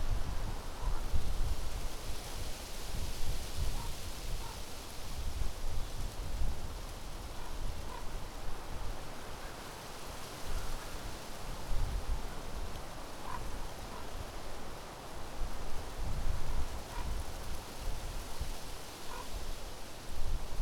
Poznan, Suchy Las, near garbage dump field - ravens and tree in the wind
ravens patrolling the area near city garbage dump site, great reverbs despite strong wind, one of the tress rattles forcefully as wind gains strength